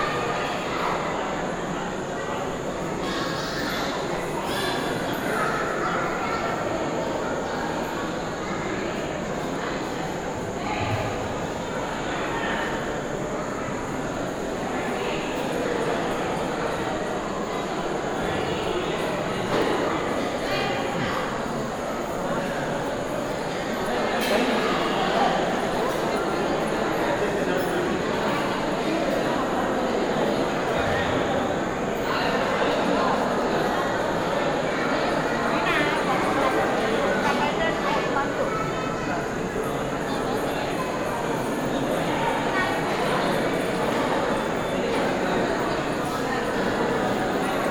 meenakshi temple - madurai, tamil nadu, india - meenakshi temple
An evening stroll through the Meenakshi Temple compound.
Recorded November 2007
27 November 2007, ~16:00